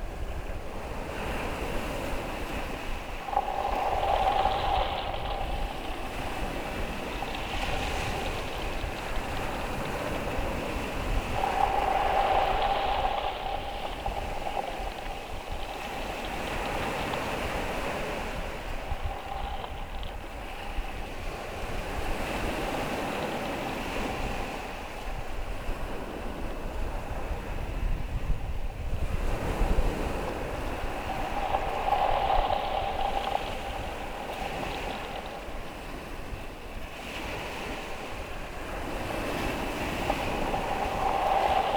Covehithe, UK - Waves sounding in and around a bleached tree trunk lying on the beach
Covehithe is a beautiful and very surreal spot on the Suffolk coast. The sea is eroding the soft sandy cliff at a speed that makes it look different on every visit. Crops disappear over the top frequently. One time the beach below was littered with onions. On this occasion barley has fallen over but is still growing fine in the landslides. Trees from an old wood lie on the sand and shingle bleached white by waves that scour through the roots and remaining branches. The sculptural forms are amazing.
The sound of the waves can be heard through tide washed trunks by pressing your ear to the wood and be picked up by a contact mic. The contact mic was recorded in sync with normal mics listening to the waves. This track is a mix of the two layers with the mono tree sound in the middle and the sea in stereo either side.